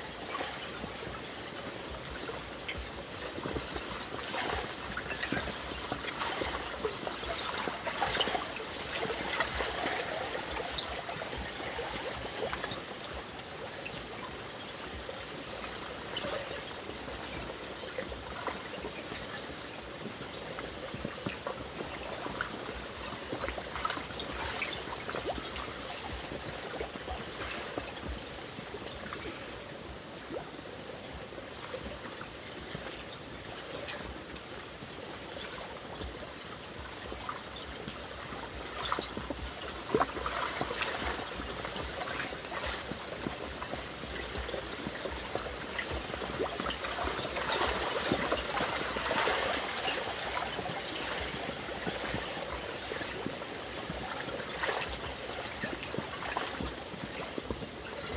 Lake Ekoln near Rörviken, Uppsala, Sweden - ten thousand ice shards chiming in the waves
lake Ekoln is full of tiny ice shards, chiming and jingling as they are being washed out on the beach.
recorded with Zoom H2n set directly on a rock, 2CH, windscreen. postprocessed with slight highpass at 80 Hz.
9 February, 10:32